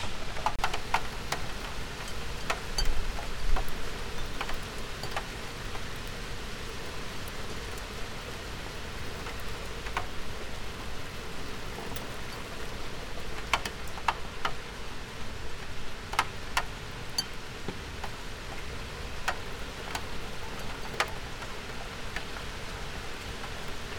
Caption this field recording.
rain, drops, tin shelf, porcelain tea cups